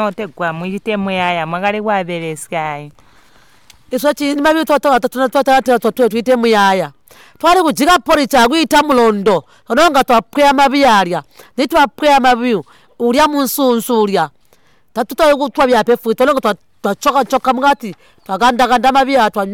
Chibondo Primary, Binga, Zimbabwe - I'm Maria Munkuli, chair lady of Manjolo Women's Forum...
Margaret Munkuli interviews Maria Munkuli, the Chair Lady of Zubo’s Manjolo Women’s Forum. Maria tells the story and history of the Forum since its inception. She emphasizes the collective project of Manjolo Women’s Forum which is to collect Baobab fruits (Mabuyo) and produce Baobab Maheo (Muyaya). Maria describes how the local community benefitted from the success of the project. The women used to share and distribute Maheo to vulnerable members of the community, to old people and school children. With the profits of selling Maheo through local shops in Binga and Manjolo, they supported three orphans in the community, enabling them to go to school. The project is currently on hold due to requirements of the Ministry of Health that the project ought to have its own production shed.
a recording from the radio project "Women documenting women stories" with Zubo Trust, a women’s organization in Binga Zimbabwe bringing women together for self-empowerment.
8 July 2016, 08:15